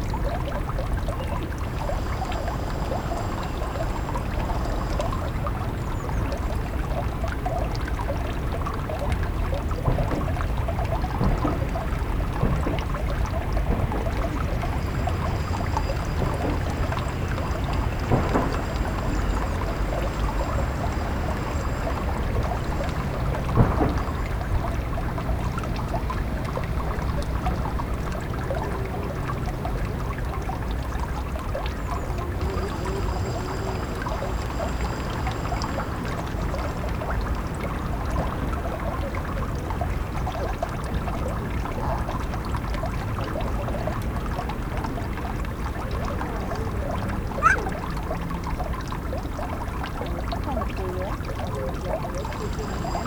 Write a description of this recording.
melt water runs down into landwehrkanal, the city, the country & me: january 28, 2013